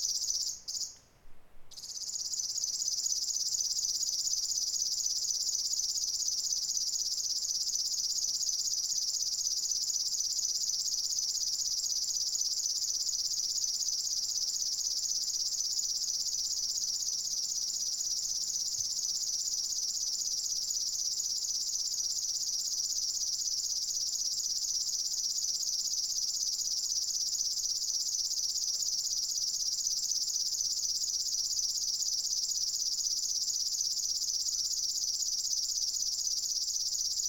river warbler, Meeksi
river warbler up close